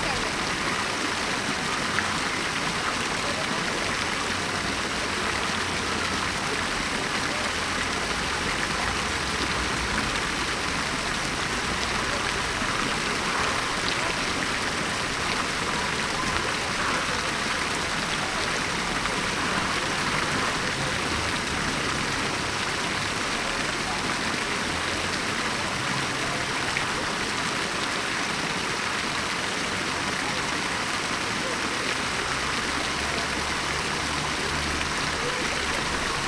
Fontaine square Louis XIII Paris
Place des Vosges - Paris
Square Louis XIII
6 April 2011, 13:55